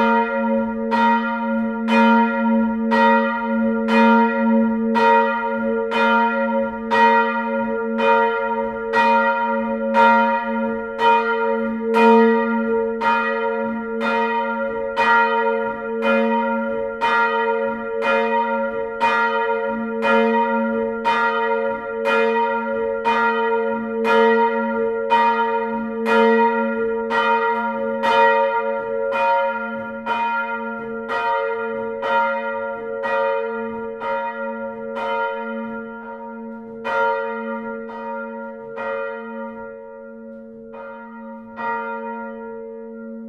essen, old catholic church, bells
and last not least the number four.
Big thanks to Mrs.Weyerer-Reimer for ringing them for me.
Projekt - Klangpromenade Essen - topographic field recordings and social ambiences
Essen, Germany, 10 June 2011